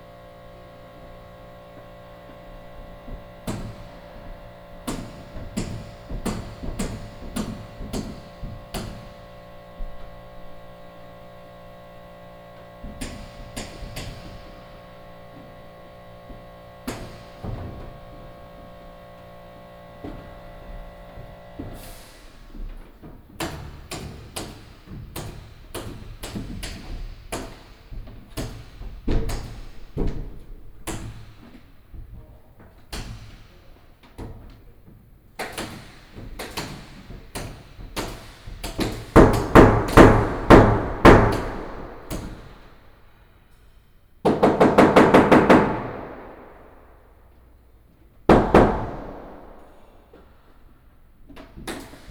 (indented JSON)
{
  "title": "New Taipei City Hall, Taiwan - Wood Construction",
  "date": "2015-09-19 19:13:00",
  "description": "Exhibition site construction",
  "latitude": "25.01",
  "longitude": "121.47",
  "altitude": "22",
  "timezone": "Asia/Taipei"
}